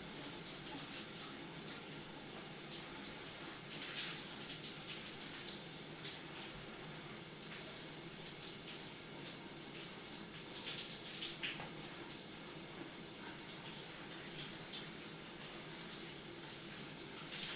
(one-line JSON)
{"title": "Colorado Ave, Boulder, CO - Inside The Bathroom", "date": "2013-02-04 12:15:00", "latitude": "40.01", "longitude": "-105.25", "altitude": "1614", "timezone": "America/Denver"}